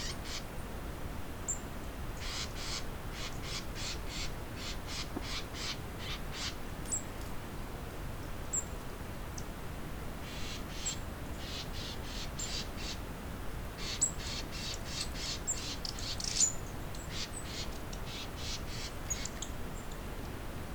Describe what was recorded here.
Light winds and birds at Poerto Yartou shore, wind SW 4 km/h. The son of Swiss immigrants, Alberto Baeriswyl Pittet was founding in 1908 the first timber venture in this area: the Puerto Yartou factory.